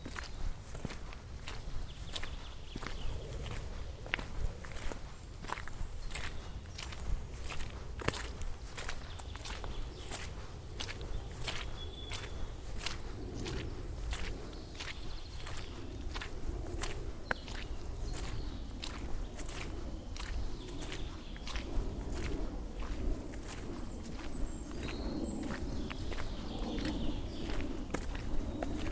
walk through forest after thunderstorm
Steps, birds, wind... Recorded june 2, 2008 - project: "hasenbrot - a private sound diary"